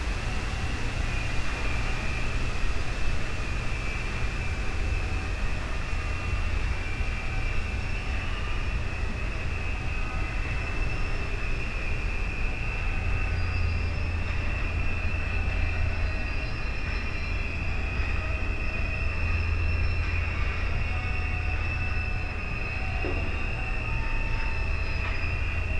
Spreepark, Plänterwald, Berlin, Germany - winds, turning wheel
high fence, standing still, strong winds through tree crowns and colossus red wheel
Sonopoetic paths Berlin